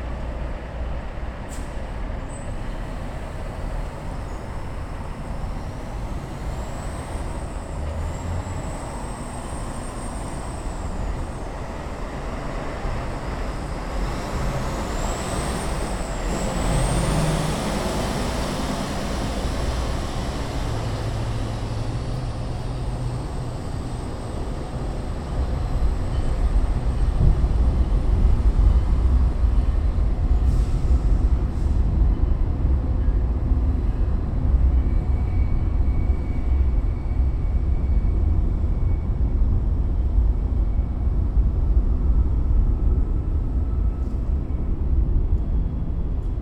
Des Plaines Ave., under the viaduct